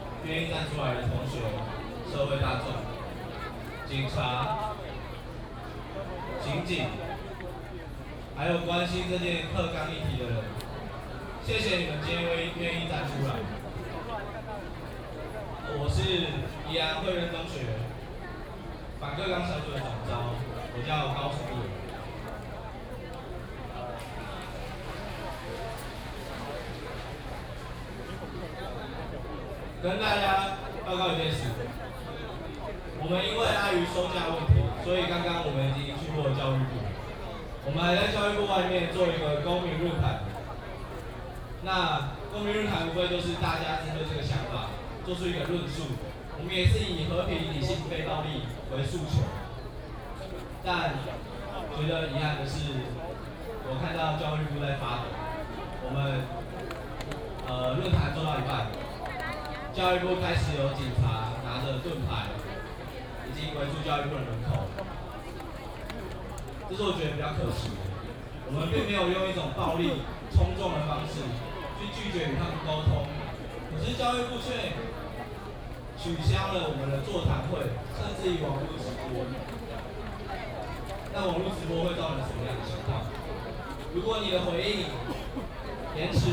Xuzhou Rd., Zhongzheng Dist. - High school student protests
Protest, High school student protests
Zhongzheng District, Taipei City, Taiwan, 5 July 2015, 15:55